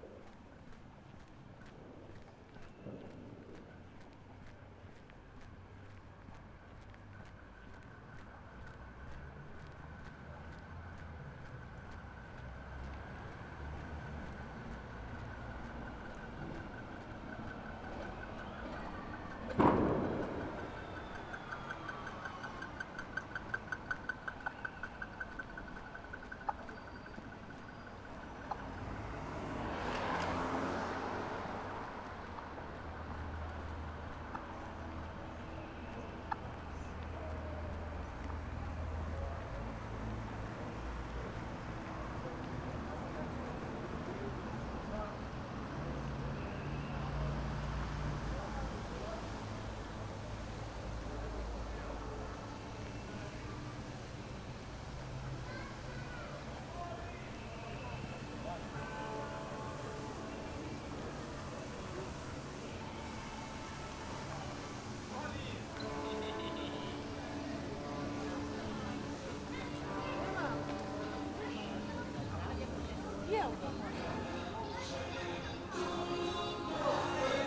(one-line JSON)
{"title": "Rijeka, Croatia - Intro Outro 2017 - BEWARE LOUD AT 2:45min", "date": "2016-12-31 19:25:00", "description": "Just walking through town on last day of 2016.\nRadio Aporee 10 years celebration :)", "latitude": "45.33", "longitude": "14.44", "altitude": "15", "timezone": "GMT+1"}